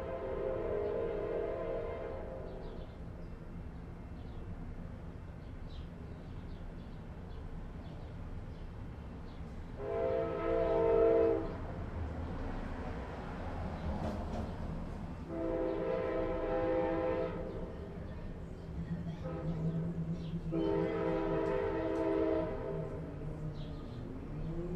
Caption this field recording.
delayed contribution to the World Listening Day 2012 - street noise, radio, birds, too much coffee guitar, train - recorded on Wednesday 07/18/2012